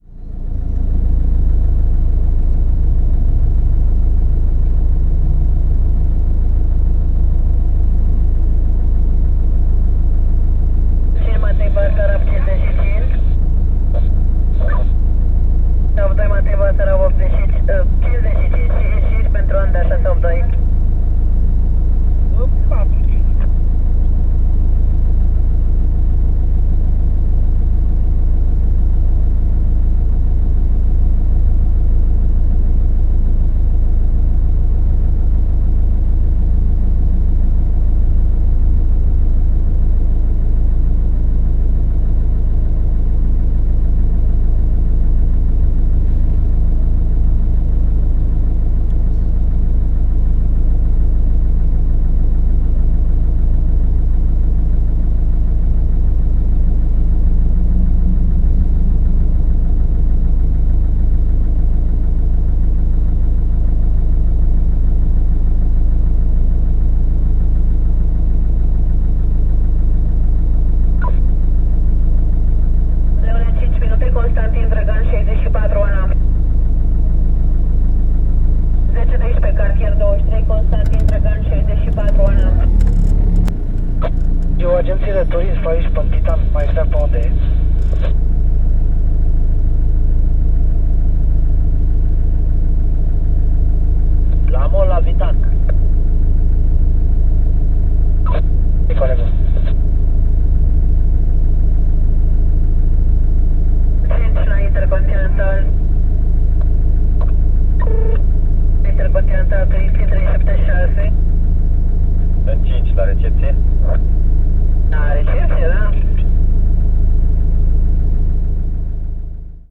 Taxi Bucharest
driving in cab, Bucharest 11-2011